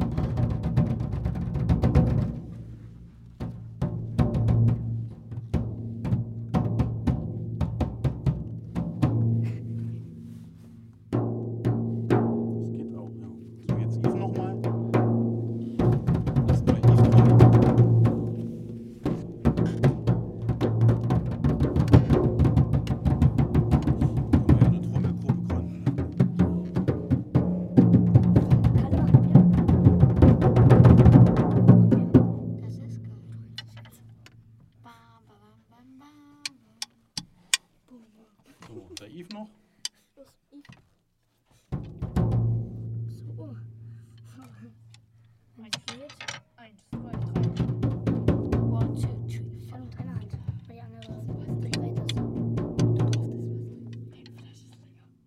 gotha, kjz big palais, projektraum "bild + ton" - lauschen beim trommelworkshop
trommelworkshop mit kindern im projektraum des medienprojektes "bild + ton"